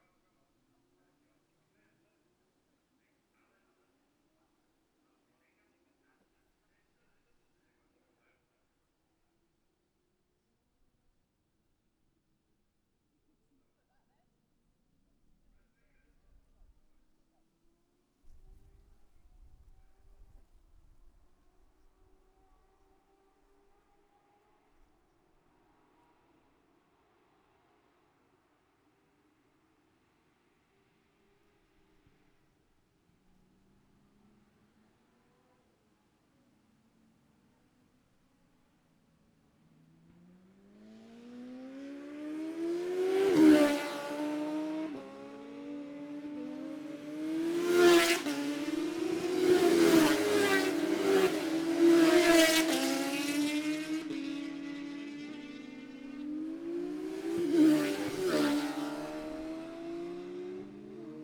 Gold Cup 2020 ... classic superbikes practice ... Memorial Out ... dpa s bag Mixpre3
11 September, 13:11